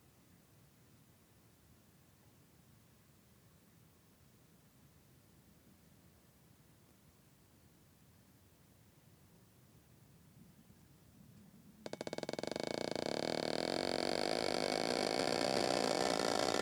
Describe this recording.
heavy wind makes a ship move which causes the rope tied to it to rub against the metal pillar. recorded with two akg 411p contact microphones on the pillar.